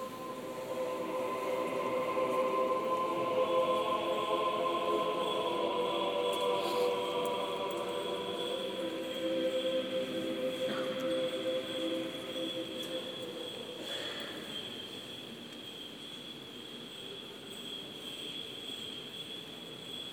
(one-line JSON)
{"title": "Исаакиевская пл., Санкт-Петербург, Россия - Christmas in St. Isaacs Cathedral", "date": "2019-01-07 00:25:00", "description": "Christmas in St. Isaac's Cathedral", "latitude": "59.93", "longitude": "30.31", "altitude": "17", "timezone": "GMT+1"}